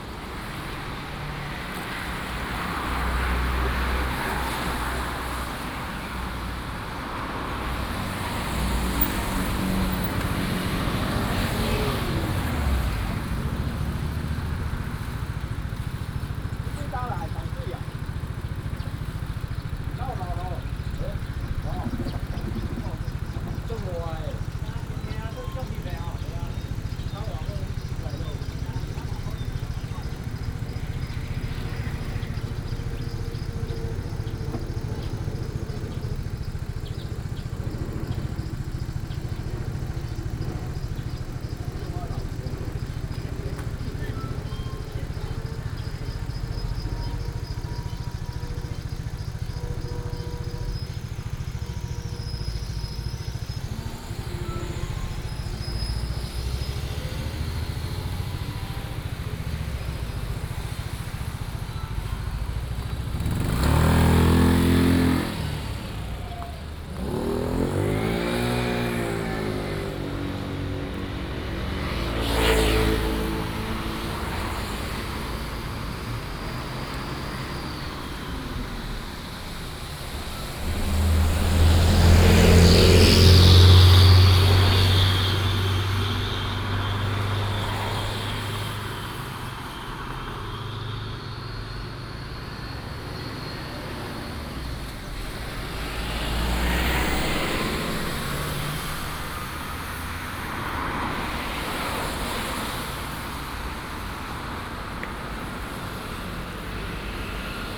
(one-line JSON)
{"title": "Sec., Danjin Rd., Tamsui Dist., New Taipei City - Traffic Sound", "date": "2016-04-16 06:12:00", "description": "Traffic Sound, In front of the convenience store, Aircraft flying through", "latitude": "25.24", "longitude": "121.46", "altitude": "23", "timezone": "Asia/Taipei"}